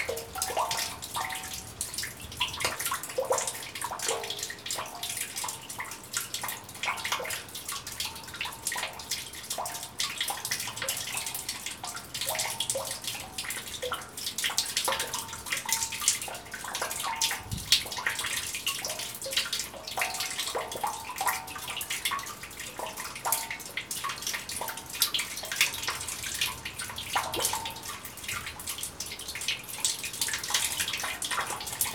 a lazy trickle dribbling from a water hose into a drain, making a nice metallic sounds in the reservoir.
Poznan, Poland, 19 June 2014, 14:34